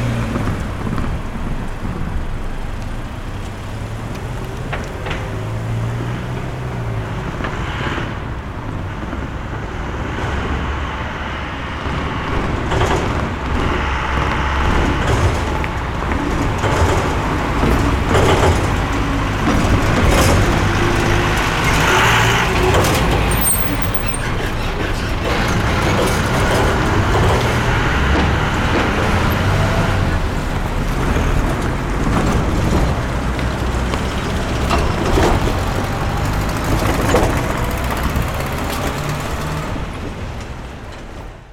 Cl., Bogotá, Colombia - Semi Crowded Atmosphere - Bogota Street
You will hear: various types of vehicles, large and small, car, trucks, bicycles, motorcycles, all of these at different speeds, horn, people walking.
Región Andina, Colombia